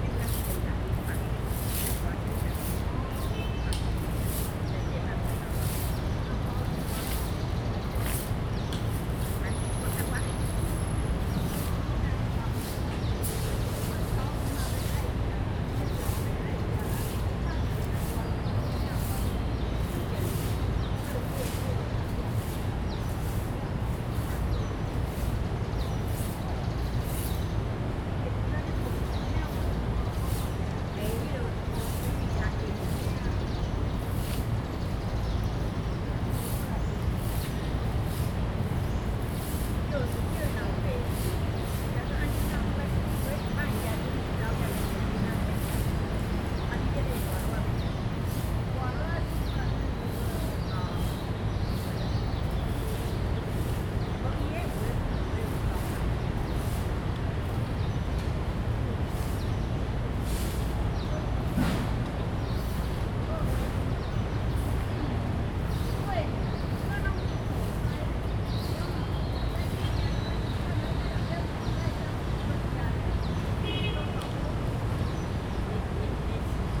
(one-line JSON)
{"title": "大安公園, Taipei City - In the park", "date": "2015-06-18 17:18:00", "description": "In the park, Old people, Sweep the floor\nZoom H2n MS+XY", "latitude": "25.04", "longitude": "121.54", "altitude": "15", "timezone": "Asia/Taipei"}